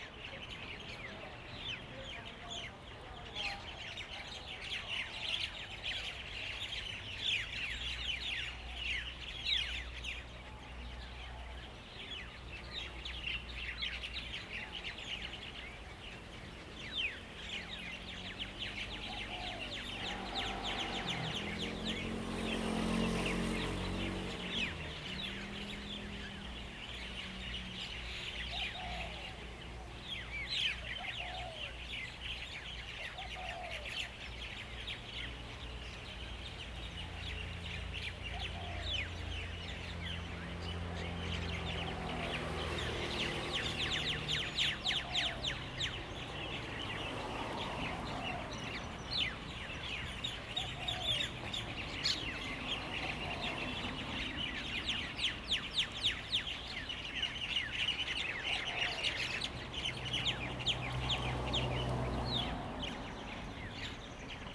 太湖, Jinhu Township - Birds singing
Birds singing, Traffic Sound
Zoom H2n MS +XY
福建省, Mainland - Taiwan Border